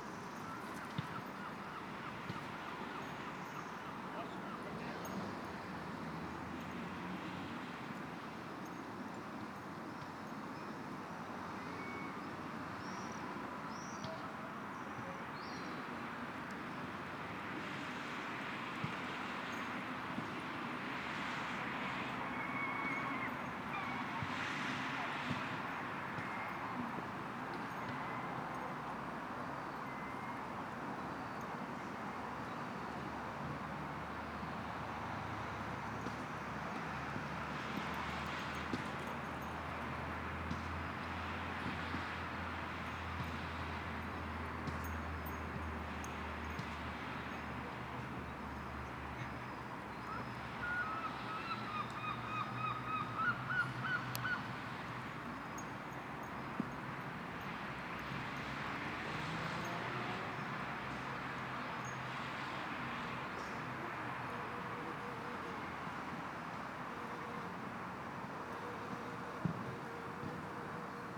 Courtenay Park, Newton Abbot, Devon, UK - World Listening Day 2014
Evening sounds in Courtenay Park, Newton Abbot Devon. Traffic, children playing, dogs running after balls, swifts, herring gulls, bees, voices ...